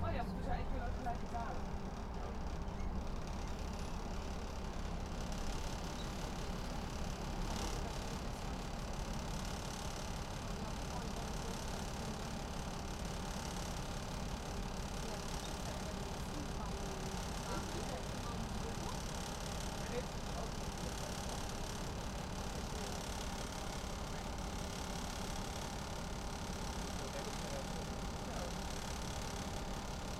The Bus 200E is driving from Kobanya Kispest, the terminal station of metro line 3, to the airport. The bus is making many noises. Recorded with a Tascam DR-100.